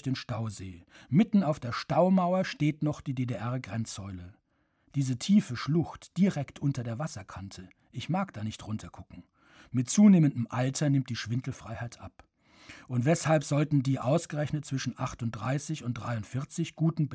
eckertalsperre - staumauer
Produktion: Deutschlandradio Kultur/Norddeutscher Rundfunk 2009
Altenau, Germany